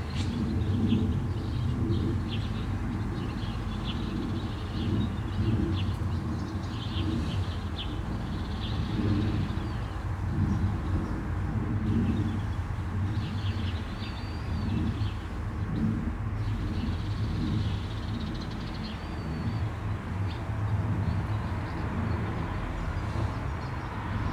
Auf dem Kirchfriedhof an einem Sommerabend. Die abendliche Atmosphäre des Ortes mit Kuhrufen, Vögeln in den Bäumen, leichtem Straßenverkehr, einem Flugzeug und die viertel vor neun Glocke der Kirche.
On the village cemetery on a summer evening. The town atmosphere with a cow calling, birds in the trees, light street traffic, a plane in the sky and the church bell at a quarter to nine.